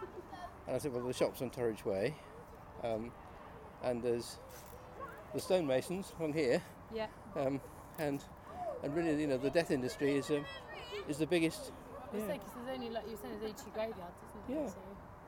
Efford Walk Two: Stonemasons and florists - Stonemasons and florists